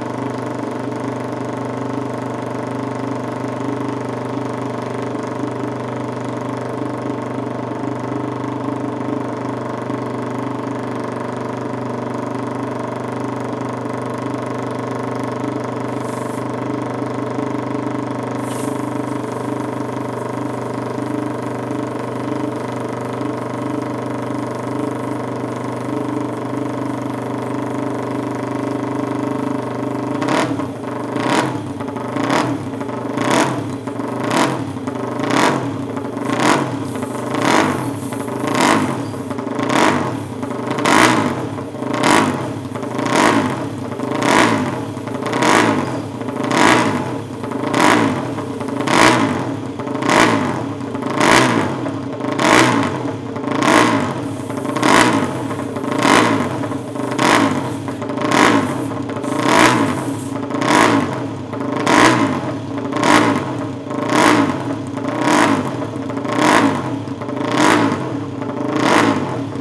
day of champions ... silverstone ... pit lane walkabout ... rode lavaliers clipped to hat to ls 11 ...